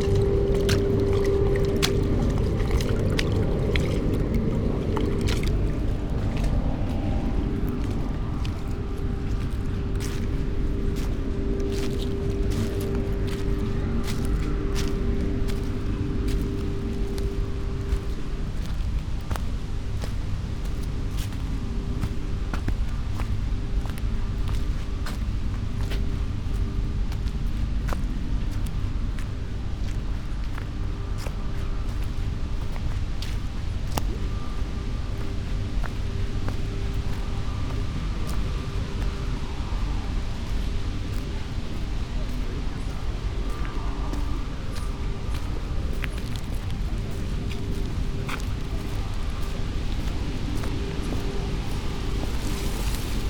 few steps away, river water and concrete wall, cement factory, wind
Sonopoetic paths Berlin
Berlin, Germany, September 2015